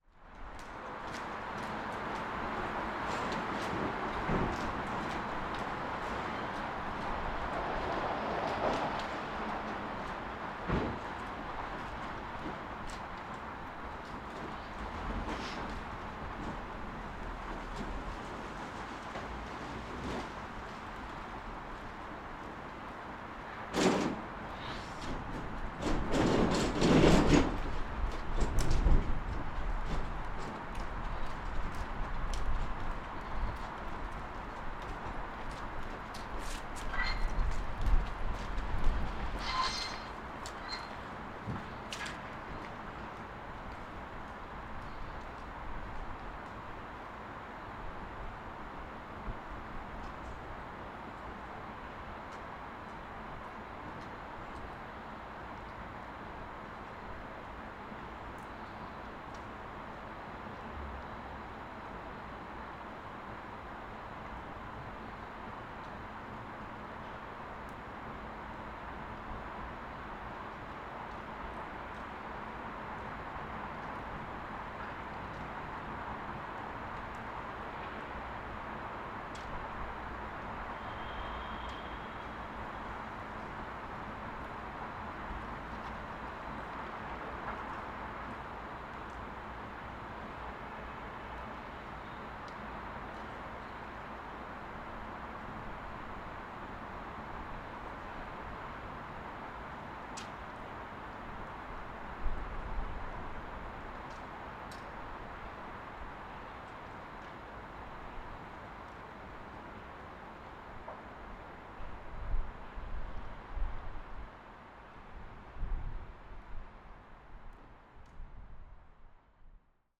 Recording in front of two bars that are now closed (Dirty Onion and Thirsty Goat), the local area is much quieter, windy, and isolated. This is five days after the new Lockdown 2 in Belfast started.